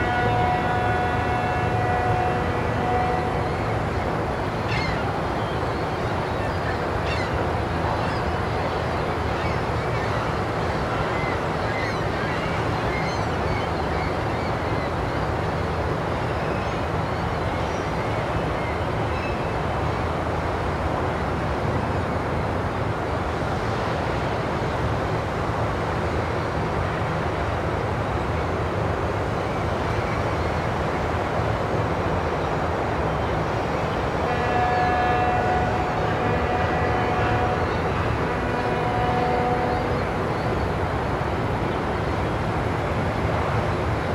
marseille, promenade louis braquier, harbour atmosphere
marseille at the sea, seagullss, a ship passing by hooting
soundmap international - social ambiences and topographic field recordings
France